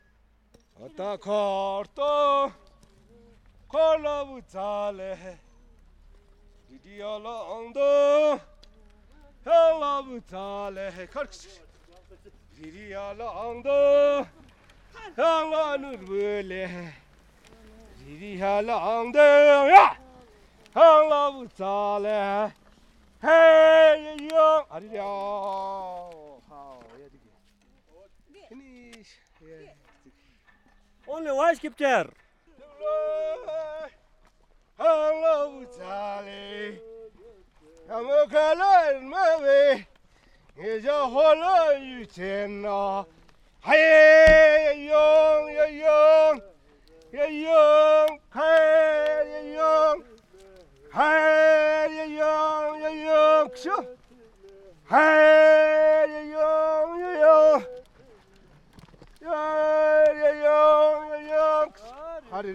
5HMP+7P Leh - Leh - Ladak - Inde
Leh - Ladak - Inde
Les semences ; fin de journée, un agriculteur, son fils et un attelage... est-ce un chant des semences ?
Fostex FR2 + AudioTechnica AT825
Leh District, Ladakh, India